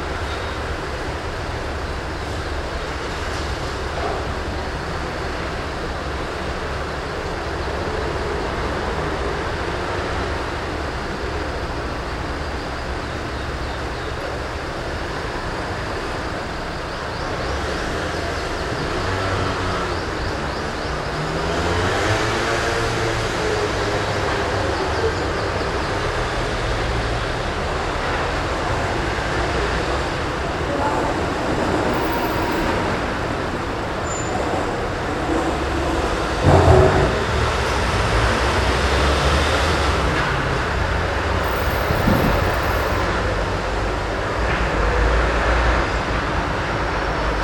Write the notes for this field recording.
Recording of joaquin costa noises - Sony recorder